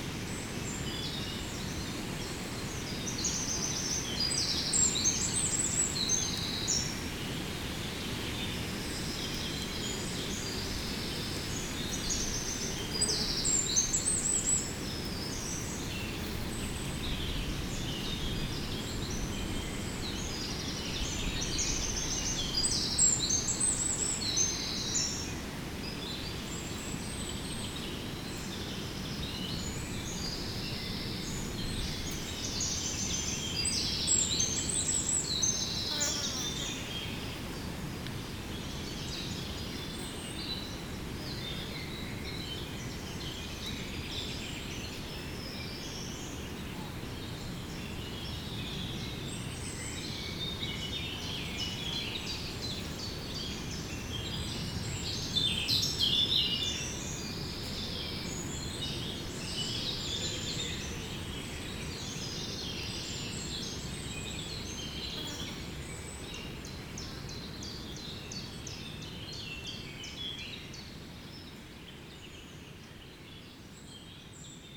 Chaumont-Gistoux, Belgique - In the woods
Walking threw the woods, the Eurasian Blackcap singing. 1:20 mn, I'm detected and one of the birds gives an alarm signal. Only the Common Chiffchaff is continuing, but quickly the territorial Eurasian Blackcap is going back to the elevated tree.